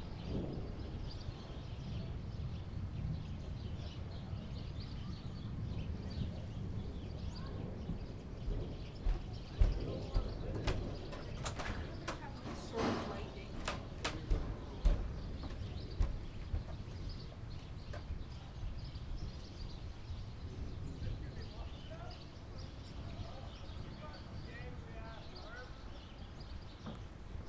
Hysop Rd, Chase, BC, Canada - Storm
31 July 2018